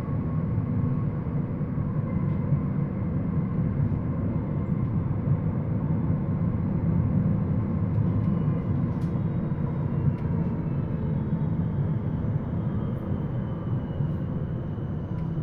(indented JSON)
{
  "title": "Bern, Schweiz - Bern, Linie 8, Steigerhubel bis Betlehem Säge",
  "date": "2021-09-02 08:40:00",
  "description": "Tram ride. Recorded with an Olympus LS 12 Recorder using the built-in microphones. Recorder hand held.",
  "latitude": "46.94",
  "longitude": "7.41",
  "altitude": "545",
  "timezone": "Europe/Zurich"
}